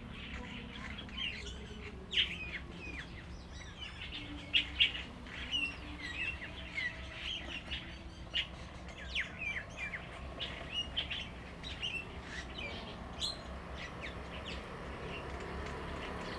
本福村, Liuqiu Township - Birds singing
Birds singing, Traffic Sound
Zoom H2n MS +XY
Liouciou Township, Pingtung County, Taiwan, 1 November